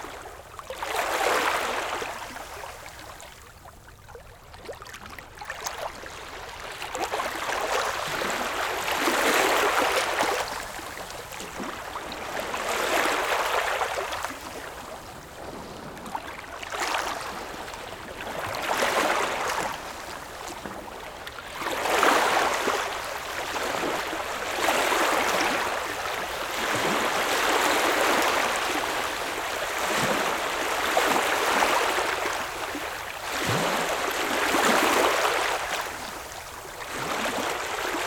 Kalles gränd, Degerhamn, Sverige - Degerhamn small waves sandy beach.
Degerhamn, sandy beach small dynamic waves. Recorded with zoom h6 and Rode ntg3. Øivind Weingaarde.
Kalmar län, Sverige, 10 September 2020, 11:45